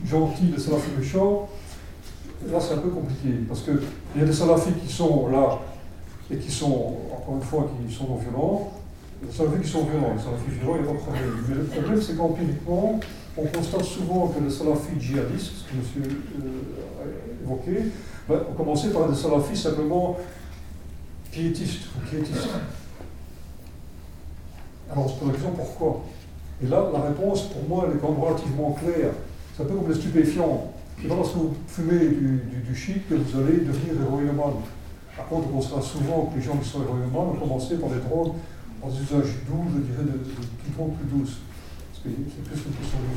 Wavre, Belgique - Conference
A conference about radicalism, made by Alain Grignard, an excellent islamologist. During a very too short hour, he explains how people could dive in a radicalism way of thinking. Recorded in the Governor's institution in Wavre.
Wavre, Belgium, 25 January 2017, ~11:00